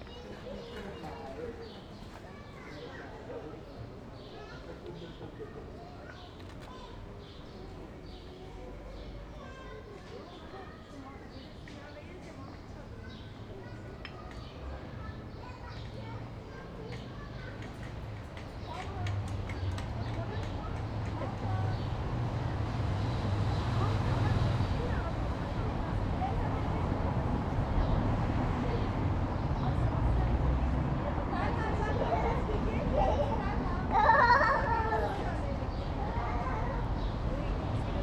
berlin: friedelstraße - the city, the country & me: street ambience
street ambience, late afternoon
the city, the country & me: june 3, 2010